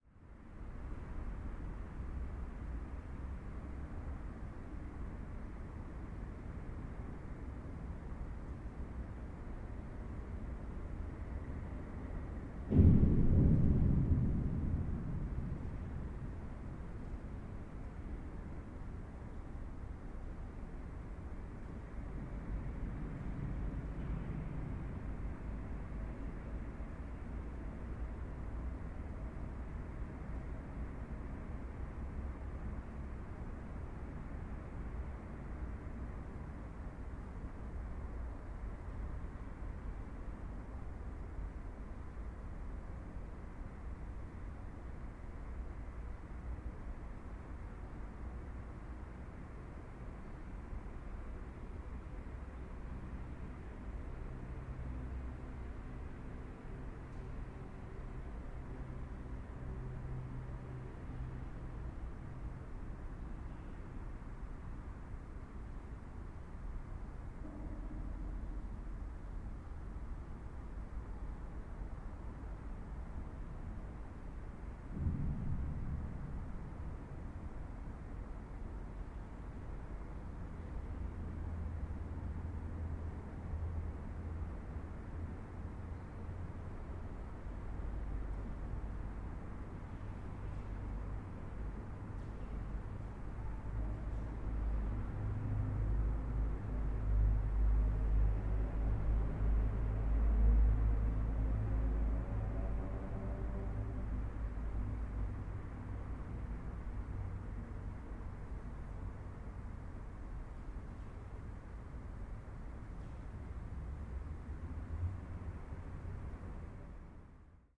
Quelle empty hall 1st floor, Muggenhof/Nürnberg
ambient sounds in the former Quelle distribution center